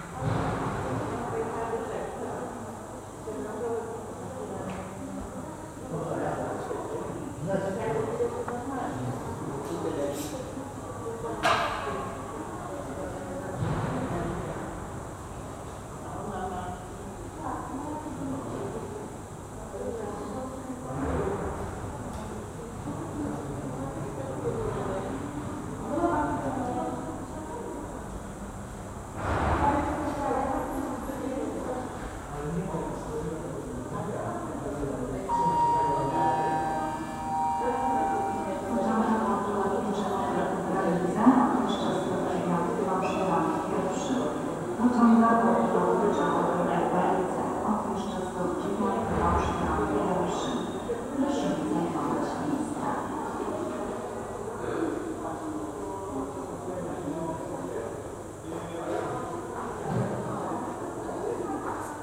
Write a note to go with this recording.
eingangshalle, dann links abbiegen in den wartesaal